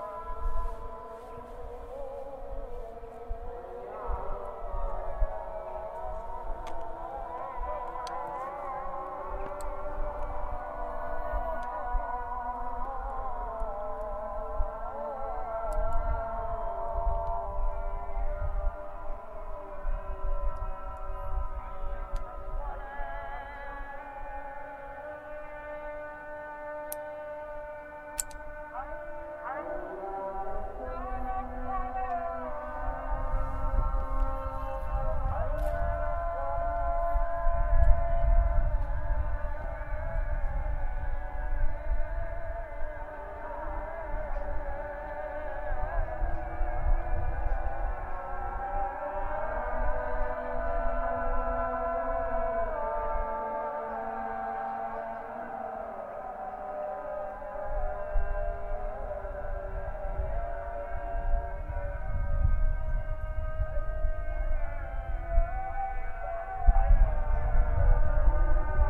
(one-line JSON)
{"title": "Israel, Jerusalem, eastern Pisgat Ze'ev neighbourhood - muezzins calls for prayer and shooting sounds in north eastern Jerusalem", "date": "2016-11-18 16:43:00", "description": "by Lenna Shterenberg. Those sounds is from observation view in the eastern Pisgat Ze'ev Jewish neighbourhood to Shuafat refugee camp. While a muezzins calls for a prayer, you can hear also a sound of shootings.", "latitude": "31.82", "longitude": "35.25", "altitude": "725", "timezone": "Asia/Hebron"}